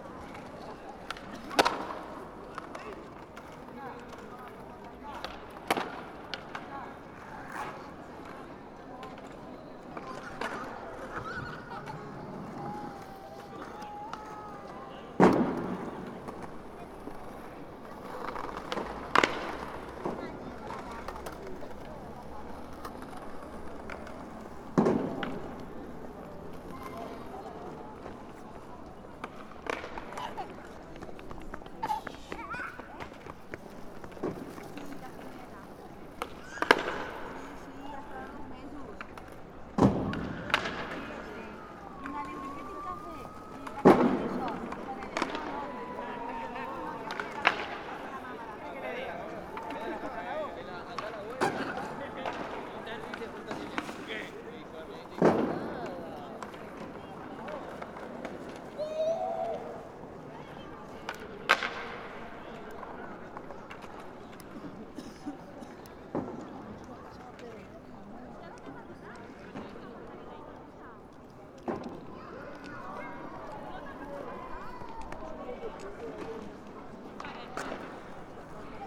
Plaça dels angels, MACBA
In front of the contemporary art museum, where skaters enjoy at all times of their own art.